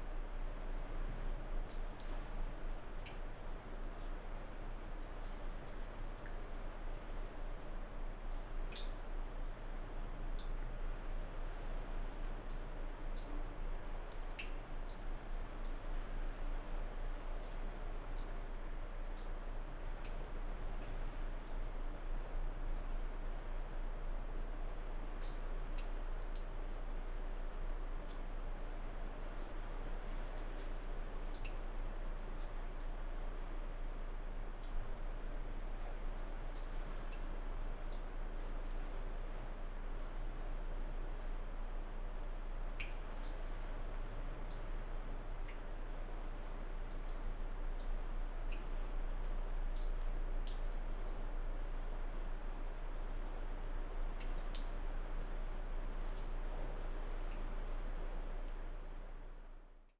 Għar Hasan cave, Ħal Far, Birżebbuġa, Malta - water drops
water drops falling from the rocks in Għar Hasan cave
(SD702, DPA4060)
3 April 2017